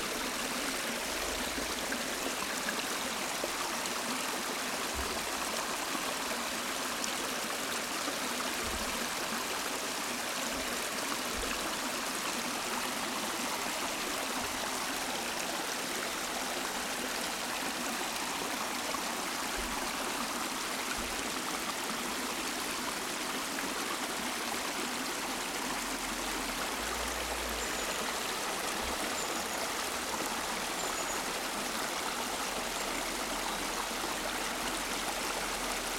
R. Principal, Portugal - Agroal fluvial beach soundscape
Small creek, water running, birds, nature soundscape. Recorded with a pair of DIY primo 172 capsules in a AB stereo configuration into a SD mixpre6.